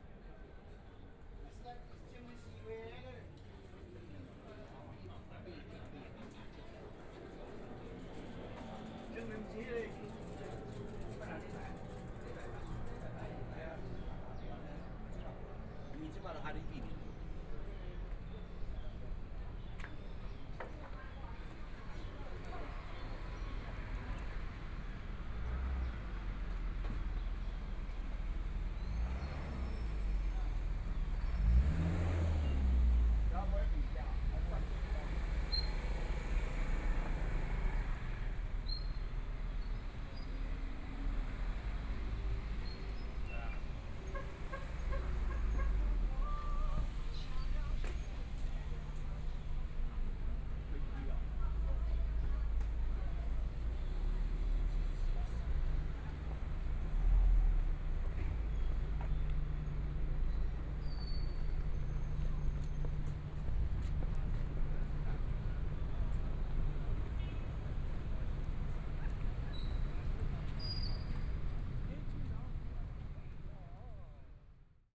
Zhongshan District, Taipei City - Walking through the small streets
Walking through the small streets, Environmental sounds, Motorcycle sound, Traffic Sound, Binaural recordings, Zoom H4n+ Soundman OKM II